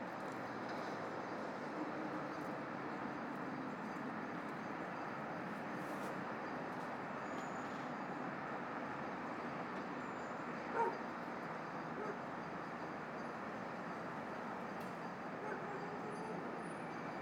Av. de La Hacienda, Narciso Mendoza, U. Hab. Narciso Mendoza Super 3 Coapa, CDMX, Mexique - Urban jungle : Dia de contingencia en la cuidad de Mexico
During a contingency day in Mexico, car or motorised vehicles are not supposed to be so many ! But as it has been possible to listen, motorized vehicles were there!
That could seem very strange to cut trees during a pollution alert in Mexico. One can have the feeling that nature will disappear with such kind of local habits! Actually people must be reminded that 40 years ago, before cars invaded the south of Mexico city, the place was occupied by trees, birds and cows! Xochimilco ecological zone is not too far from this place!
What I found, listening the city this day of may, was the feeling that motorized noises will not be in place for centuries. Broken tree branch noises, birds that we can listened from time to time are a clear message than resilience is not an abstract concept. Colibri are still leaving in this noisy and polluted city.